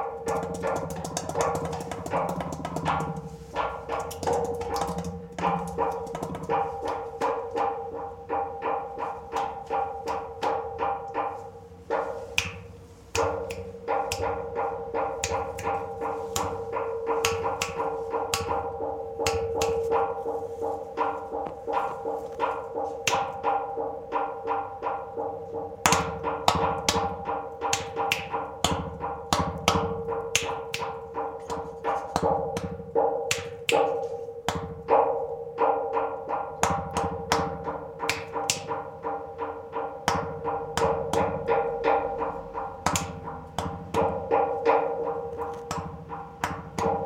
playing concrete pole with microphones inside.